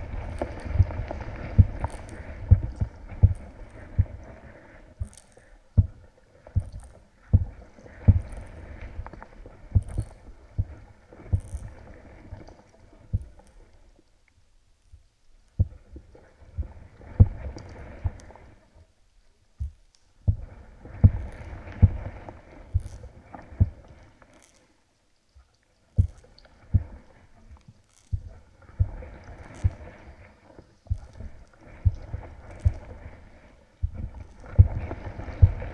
recording for ongoing Debris Ecology project: contact microphone on the found object - a bottle in water